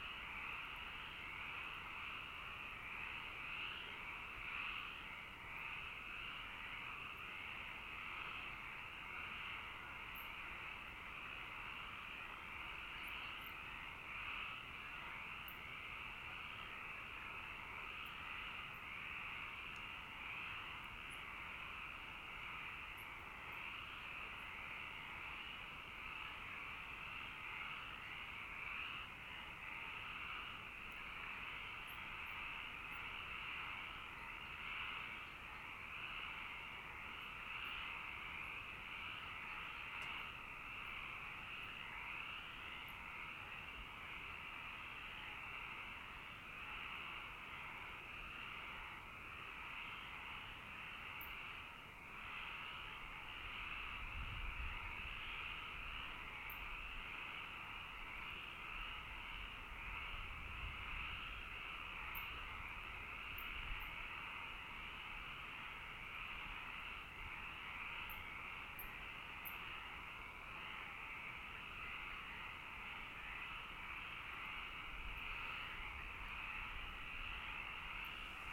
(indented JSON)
{
  "title": "Lingueglietta Imperia, Italien - Nightfrogs",
  "date": "2013-06-03 23:23:00",
  "description": "At night in the small village Lingueglietta. You hear lots of frogs and no cars",
  "latitude": "43.87",
  "longitude": "7.93",
  "altitude": "308",
  "timezone": "Europe/Rome"
}